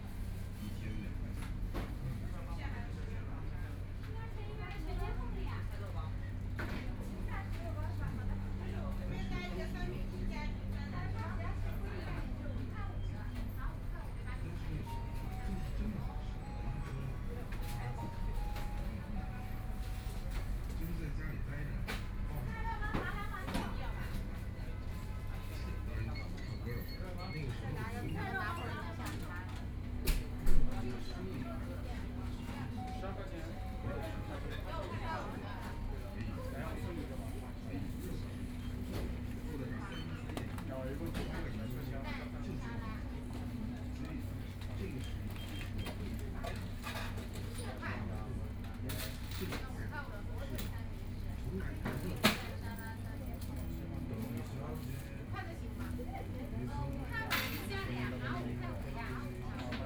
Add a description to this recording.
In convenience stores, Corner, walking in the Street, traffic sound, Binaural recording, Zoom H6+ Soundman OKM II